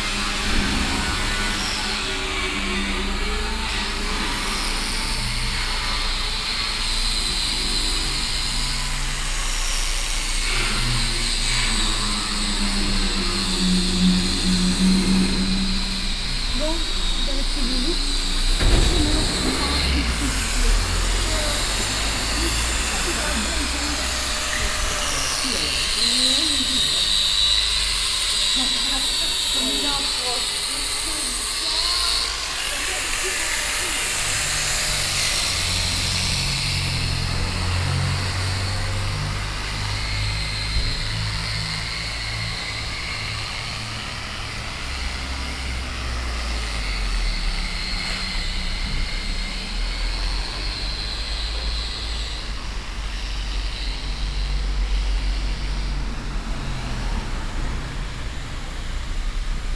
Brussels, Rue de Suisse, Grinders all around
The buildings here are nice but old, with the speculation a lot of them are now restored to be sold afterwards.
PCM-M10, SP-TFB-2, binaural.